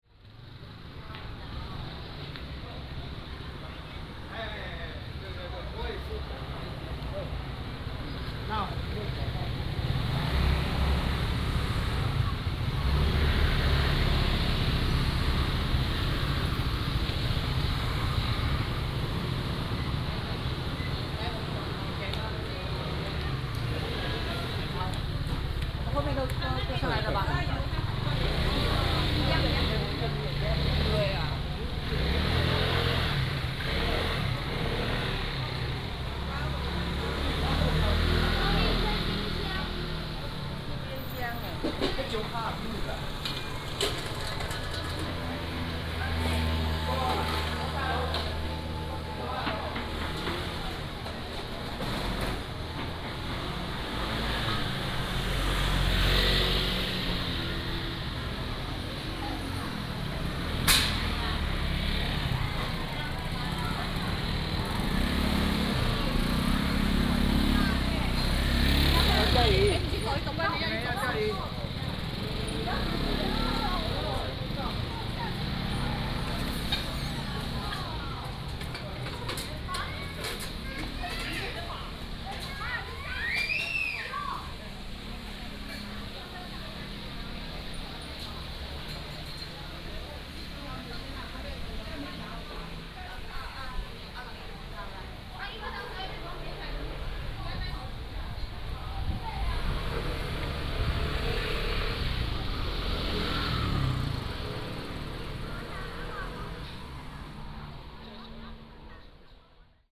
Juguang Rd., Jincheng Township - Walking in the Street
Walking in the Street, Traffic Sound, Various shops, Tourists
福建省, Mainland - Taiwan Border, 3 November 2014, 18:08